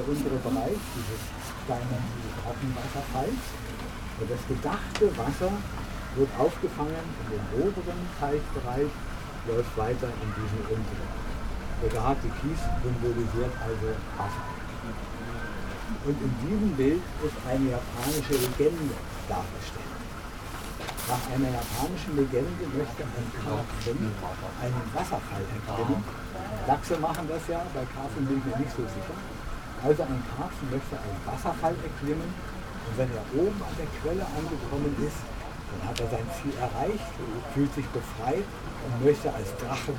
a tourguide explains that a Japanese garden should be looked as a 3D landscape image and that it normally conveys a legend. He also mentions the meaning of particular elements - depending on the lay out and direction of the grooves raked in the fine stones on the ground some elements represent a living creature and other inanimate objects.
Berlin, Germany